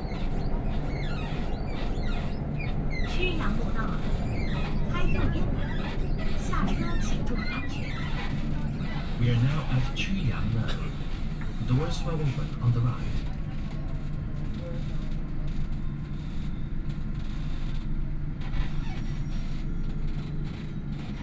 Yangpu District, Shanfhai - Line 8 (Shanghai Metro)
from Jiangpu Road station to Hongkou Football Stadium station, erhu, Binaural recording, Zoom H6+ Soundman OKM II
Shanghai, China, 3 December 2013, 12:35